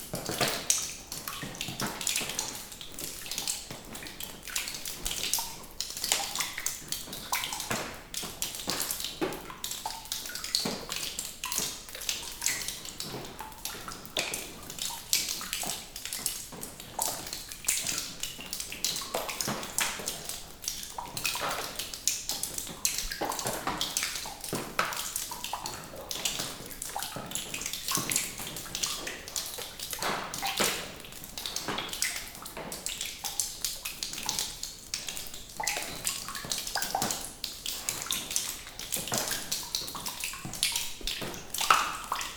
Fleury-sur-Orne, France - Drips
Drips of water falling on the floor and on plastic covers in a cave (mushroom bed) with Zoom H6
February 2016